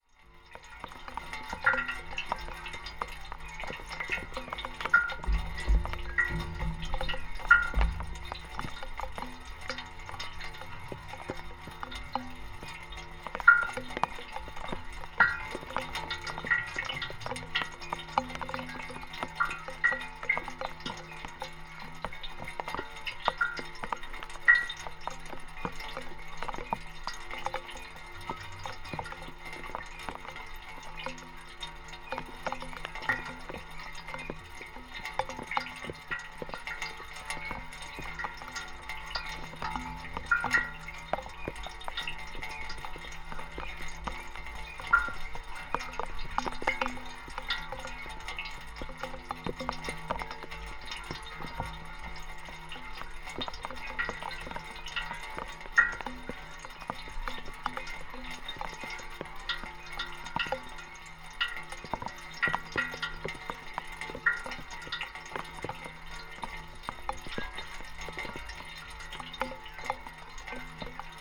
Františkánská zahrada, Prague - fountain
fountain at Františkánská zahrada, Prague, recorded with a pair of contact microphones, during the Sounds of Europe radio spaces workshop.
Prague-Prague, Czech Republic, 2 October, ~16:00